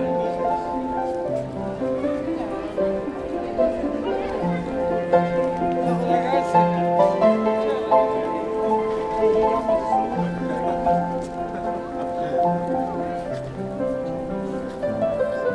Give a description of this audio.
Into the commercial street called Meir, on a colorful saturday afternoon, people walking quietly. A piano player, called Toby Jacobs. He's speaking to people while playing !